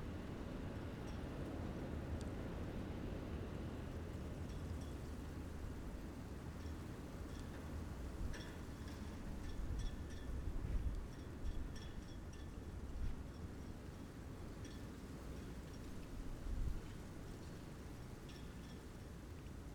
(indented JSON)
{
  "title": "bad freienwalde/oder: uchtenhagenstraße - the city, the country & me: street ambience",
  "date": "2015-01-02 21:58:00",
  "description": "stormy evening, mic in the window, street ambience, rustling leaves\nthe city, the country & me: january 2, 2015",
  "latitude": "52.79",
  "longitude": "14.03",
  "altitude": "15",
  "timezone": "Europe/Berlin"
}